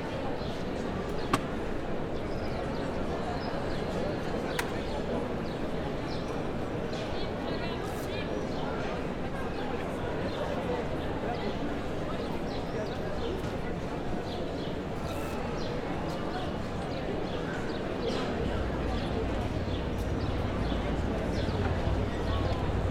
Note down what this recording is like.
street, square, bar, birds, city noise . Captation : ZOOMH6